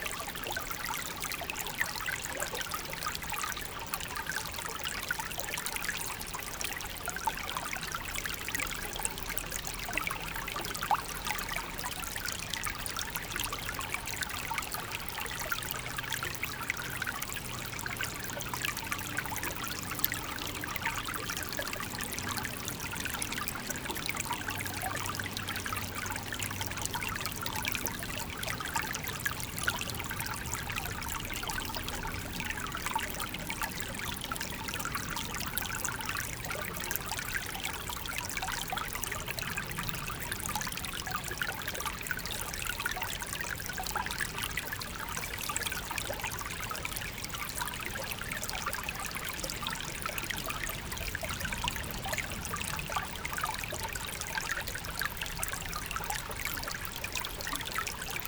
Recording of a very small stream inside the woods. This rivulet has no name, as it's so small ! But there's an impressive basin, probably because of the agricultural runoff.
Chaumont-Gistoux, Belgium, 15 August 2016